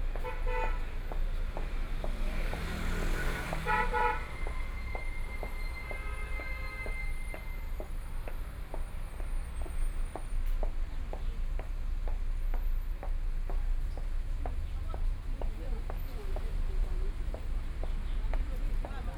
Baoqing Road, Shanghai - Follow the footsteps
Walking in the street, Follow the footsteps, Binaural recording, Zoom H6+ Soundman OKM II